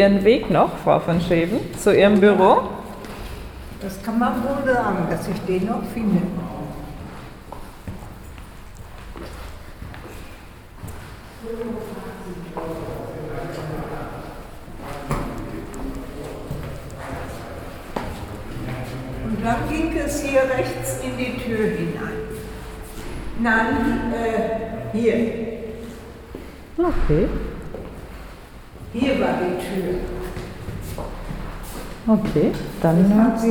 Amtshaus Pelkum, Hamm, Germany - Ilsemarie von Scheven talks local history in situ
We visit the town hall (Amtshaus) Pelkum with the former city archivist Ilsemarie von Scheven. The 93-year-old guides us through the building along her memories. The staircase and corridors awaken memories; most of the rooms less so; a journey along Ms von Scheven's memories of a time when the archives of the new independent city of Hamm were housed here in the building or rather, were re-created under the careful hands of two, quote von Scheven, "50-year-old non-specialists"; a re-creation, literally like a Phoenix rising from the ashes. The women's mission was to "build a replacement archive for the city". The town's archives had been burnt down with the town hall in the bombs of the Second World War; the only one in Westphalia, as Ms von Scheven points out.
Where the memory leaves us, we explore what can nowadays be found in the building. The head of the city hall himself grants us access and accompanies us.
Nordrhein-Westfalen, Deutschland